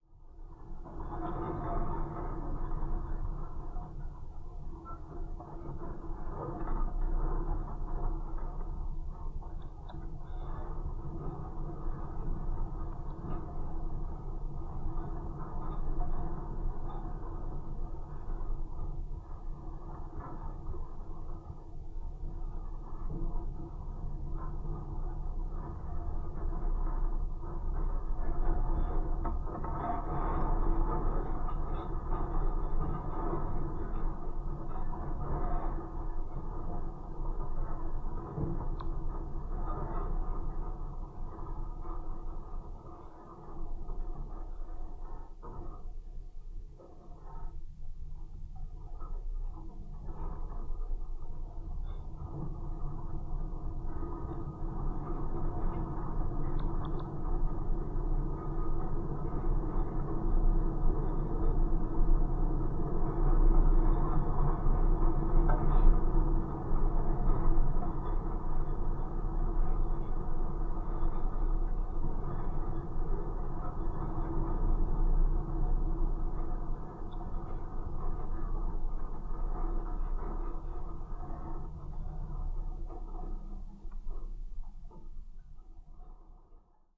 Greentree Park, Kirkwood, Missouri, USA - Greentree Gate
Geophone recording of metal gate in the woods.